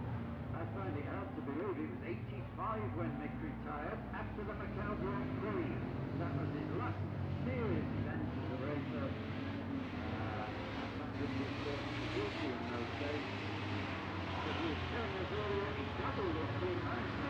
Jacksons Ln, Scarborough, UK - barry sheene classic 2009 ... parade laps ...
barry sheene classic 2009 ... parade laps ... one point stereo mic to minidisk ...
23 May